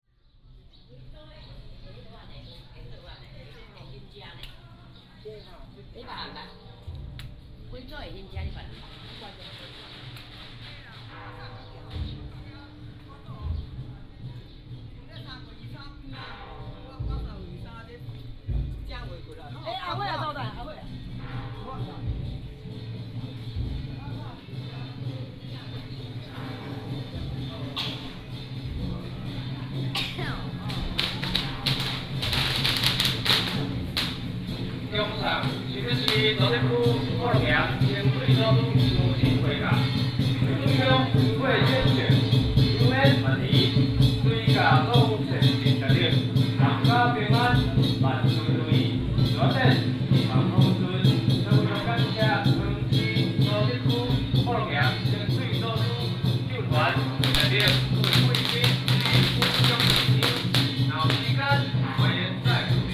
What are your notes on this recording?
Walking in the alley, Next to the temple, Pilgrimage group, firecracker